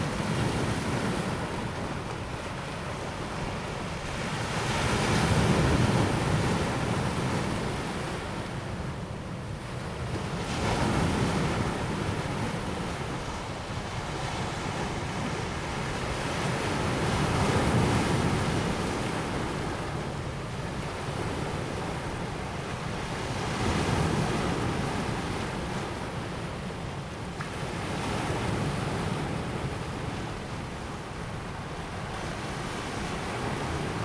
紅毛港遊憩區, Xinfeng Township - in the beach
in the beach, Seawater high tide time, sound of the waves
Zoom H2n MS+XY